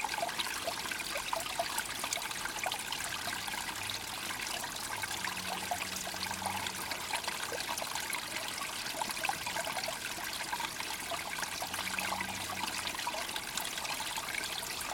Owl Creek, Queeny Park, Town and Country, Missouri, USA - Owl Creek Cascade
Recording from cascade in Owl Creek in Queeny Park
Missouri, United States, 16 August 2022